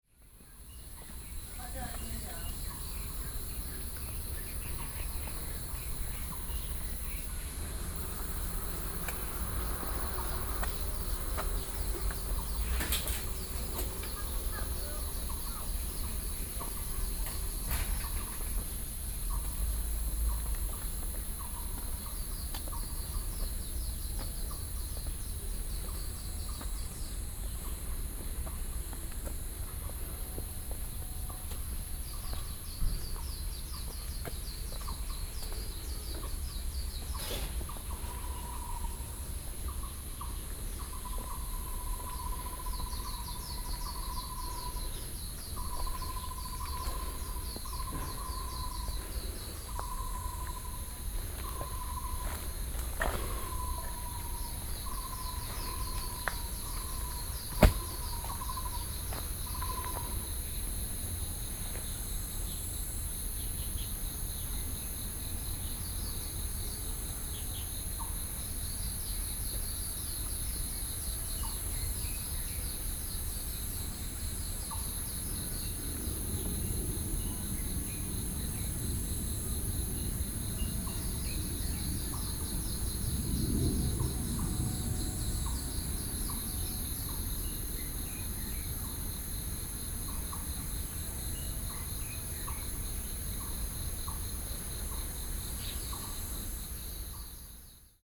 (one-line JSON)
{"title": "Tianmu, Shilin District - Hiking trails", "date": "2012-06-23 08:19:00", "description": "Hiking trails, Sony PCM D50 + Soundman OKM II", "latitude": "25.13", "longitude": "121.53", "altitude": "114", "timezone": "Asia/Taipei"}